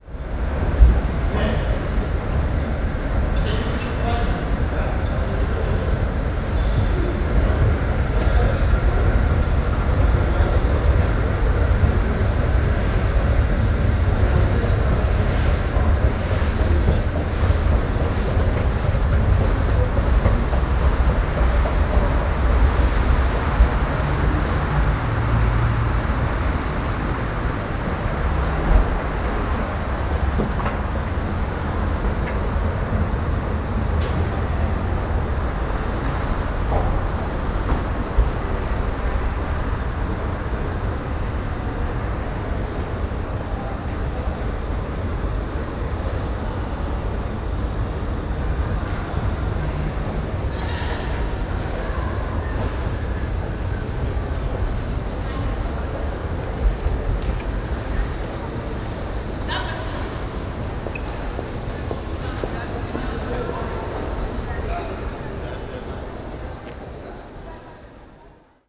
essen, rathaus galerie, moving stair case
driving upwards into the gallery with the moving staircase
Projekt: Klangpromenade Essen -
social ambiences and topographic field recordings